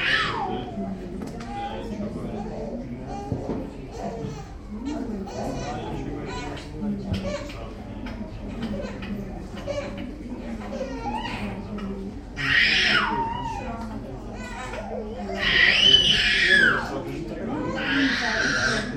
Pediatric department of the Municipal Hospital Na Bulovce.